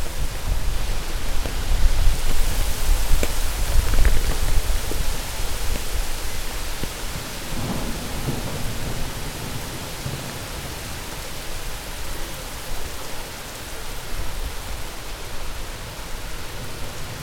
The first spring Dejvice storm
The spring storm in Dejvice, recorded in the Cafe Kabinet.
Kabinet is beautiful cafe in quit place in the heart of Dejvice. It is even calmer thanks to construction works in the street. So cars can drive through from one side. During the conversation about events in Institut of Intermedia in ČVUT, which is pretty close from there, the storm came very quickly with strong rain.
26 May, ~09:00